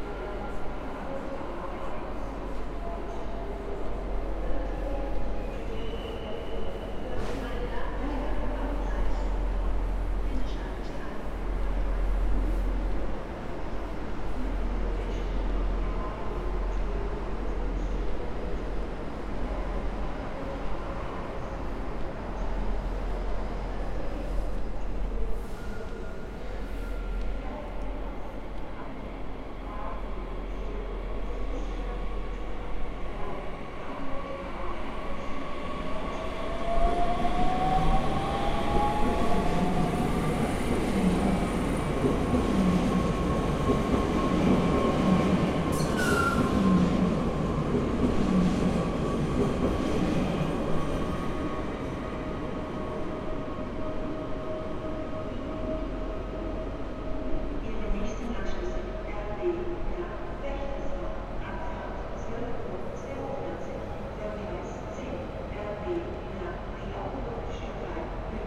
Frankfurt (Main) Hauptbahnhof, Gleis - Gleis 21 Train to Bruessels does not drive
This is the third recording of the 21st of March 2020, the people were already told only to leave the house in urgent cases. Train connections to Amsterdam, Paris and Brussels were interrupted because of the spreading of the corona virus. Thus the anouncment is audible that the train at 12:29 is cancelled. The recording is made on the platform where the train should have left on this quiet friday...
21 March 2020, 12:22